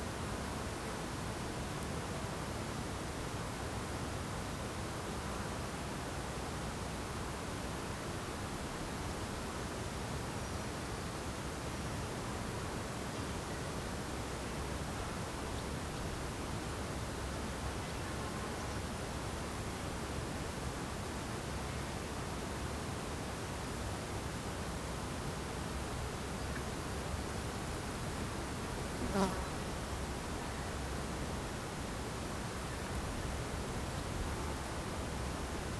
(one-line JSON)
{"title": "Grange, Co. Limerick, Ireland - Large stone circle", "date": "2013-07-18 12:00:00", "description": "The Grange stone circle (Lios na Grainsi) is the largest stone circle in Ireland. While regarded by many as a sacred place, it can be quite noisy on a normal day of the week.", "latitude": "52.51", "longitude": "-8.54", "altitude": "81", "timezone": "Europe/Dublin"}